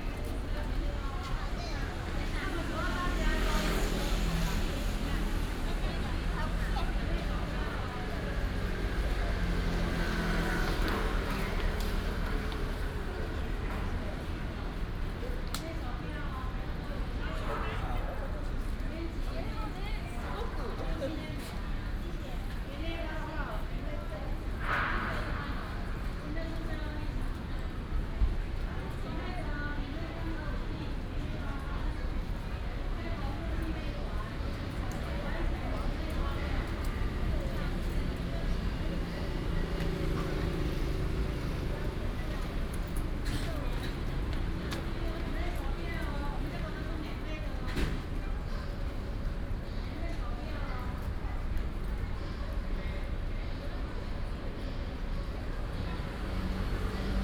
Ln., Sec., Zhongxiao E. Rd., Xinyi Dist., Taipei City - holiday
holiday, traffic sound, Many tourists
Taipei City, Taiwan, 18 December, ~15:00